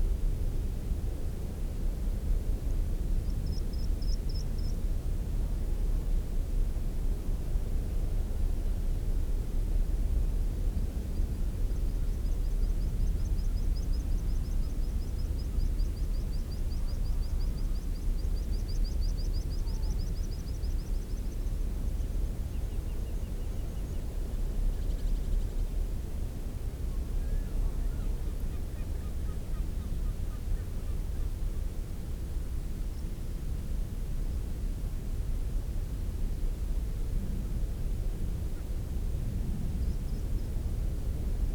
Marloes and St. Brides, UK - Skokholm soundscape ...
Skokholm soundscape ... bird song skylark and rock pipit ... calls form lesser black-backed gull ... crow ... open lavalier mics either side of sandwich box ... background noise ...